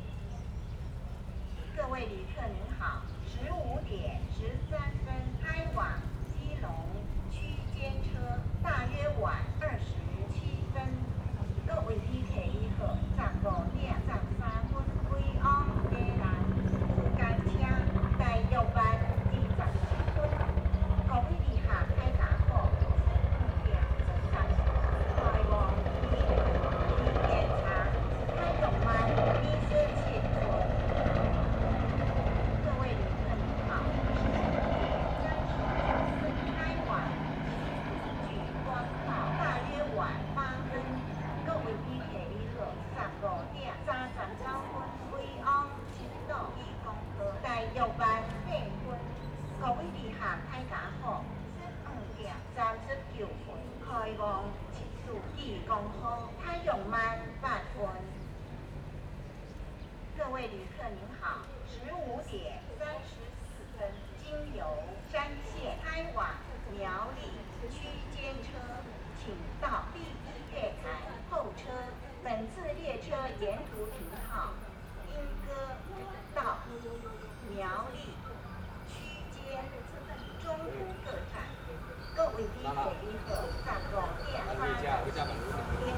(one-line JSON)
{"title": "Yingge Station, New Taipei City, Taiwan - In the station platform", "date": "2011-11-29 15:39:00", "description": "In the station platform, Birds singing, helicopter\nZoom H4n XY+Rode NT4", "latitude": "24.95", "longitude": "121.35", "altitude": "55", "timezone": "Asia/Taipei"}